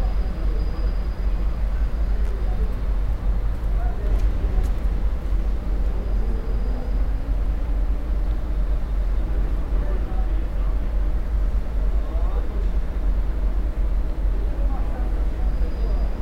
Railaway station Vršovice Praha 10 - Turntable Music 2
Praha-Vršovice railway station (Nádraží Praha-Vršovice) is a railway station located in Prague 4 at the edge of Vršovice and Nusle districts, The station is located on the main line from Praha hlavní nádraží to České Budějovice, and the local line to Dobříš and Čerčany via Vrané nad Vltavou. This is the area under the Bohdalec hill with locomotive depo and turntable.
Binaural recording